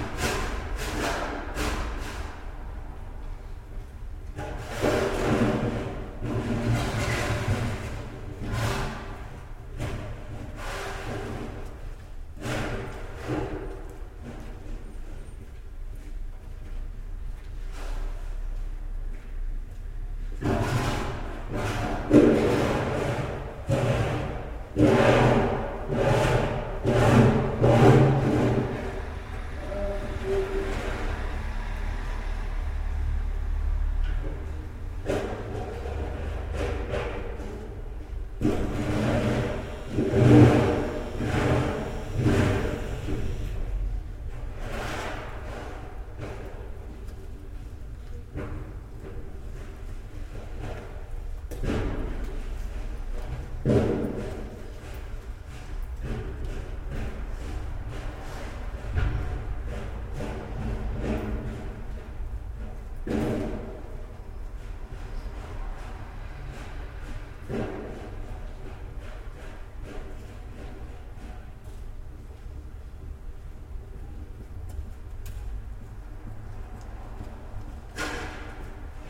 morning snow scraping in the courtyard, riga, latvia
a worker scrapes snow from growing piles into the drains in an echoey central riga courtyard
Latvija, European Union